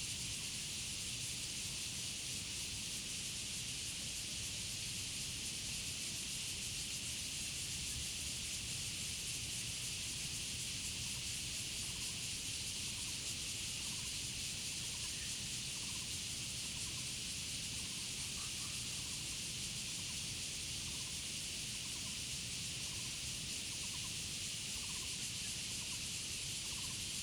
玉長公路, Changbin Township - Cicadas and Frogs
Cicadas sound, Frogs sound, Birds singing, Near Highway Tunnel
Zoom H2n MS+XY